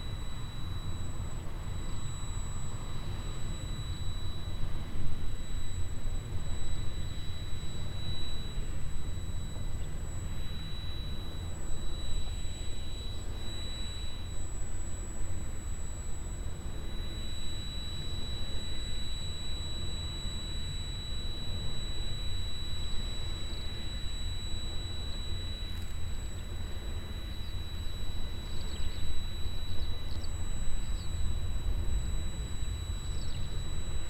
Standing by a wind mill on a windy summer morning. The steady sound of a inside generator and the regular movement sound of the mills wings. In the distance the traffic of the nearby street.
Heiderscheid, Windkraftwerk
Neben einer Windmühle an einem windigen des im Turm befindlichen Generators und das Geräusch der regelmäßigen Bewegung der MWindrotoren. In der Ferne der Verkehr auf der Straße.
Heiderscheid, éolienne
Debout au pied du mât de l’éolienne. Un avion à moteur traverse le ciel, des oiseaux gazouillent et le bruit du mouvement des pales de l’éolienne.
Project - Klangraum Our - topographic field recordings, sound objects and social ambiences